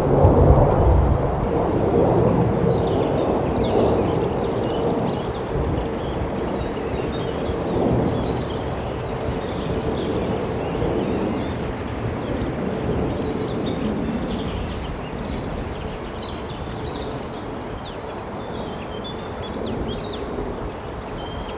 erkrath, neandertal, flughimmel
flugzeugüberflug am morgen im frühjahr 07 - flugachse flughafen düsseldorf
soundmap nrw:
social ambiences/ listen to the people - in & outdoor nearfield recordings